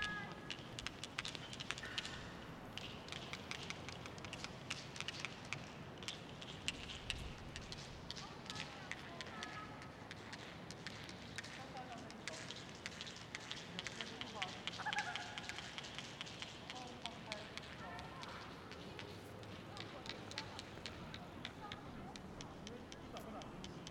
林口社宅D區綠草地上 - 敲打石頭的殘響
林口社宅Ｄ區公共空間的綠色草地上的有趣殘響